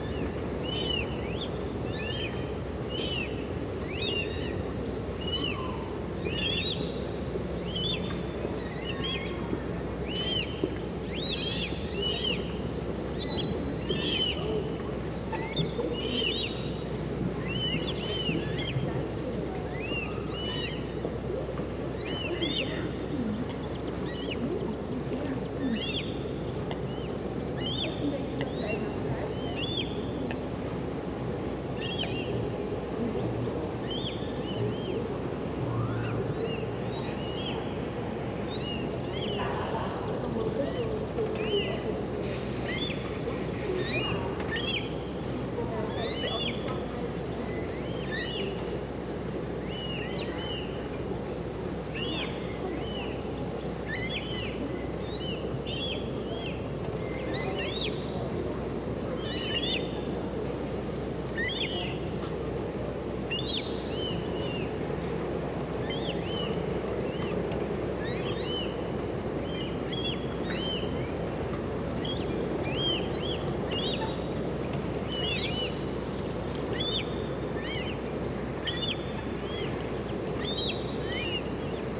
A warm and sunny day at the Historic Delfshaven. You can hear the birds flying around and moving in the water as well as few people passing by. At 4´55 you can hear the carillon from Pelgrimvaderskerk. Recorded with a parabolic Dodotronic mic